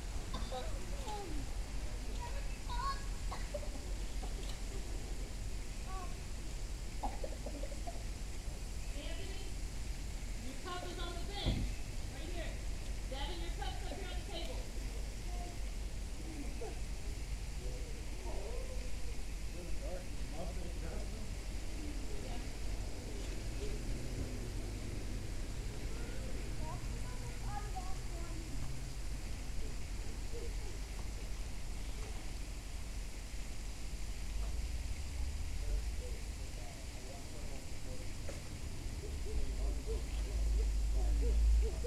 A small park with a pond, playground, and picnic tables. Children were playing and a family fed the ducks while the recording took place. A train came by a couple minutes in. You can hear cars, water from the pond to the left, and sparse birdsong.
[Tascam DR-100mkiii & Primo EM-272 omni mics]